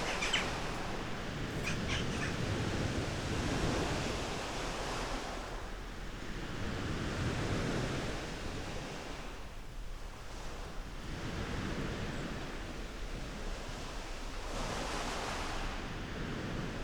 Agios Georgios Pegeias, Πέγεια, Cyprus - beachcoming on White River Beach
White River Beach is a lovely, scruffy little beach near Agios Giorgios and the Avakas Gorge on the Akamas Peninsular. We found an amazing little homestead carved in the the stone caves and lots of good wood for burning. As we were in an apartment in Kathikas in January we needed wood and we were being charged 10Eu per bag. On the first day we were there it was very stormy with huge waves. Three days later everything was very quiet. I could even hear the Western Jackdaws · (Coloeus monedula) on the cliffs. The road is unmetaled just after this and you can gently bump along (in an appropriate vehicle) to Lara beach where you may see turtles. Recorded using omni Primo capsules in spaced array to Olympus LS 11